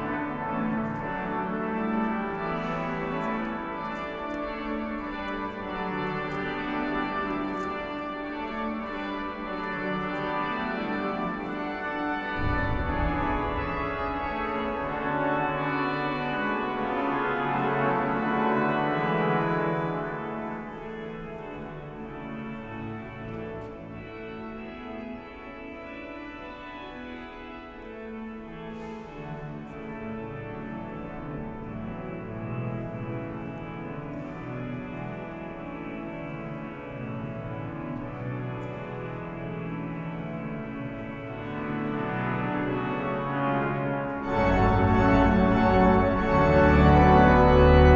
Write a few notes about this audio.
Pruebas de sonido del órgano del Monasterio de Leyre. Grabación binaural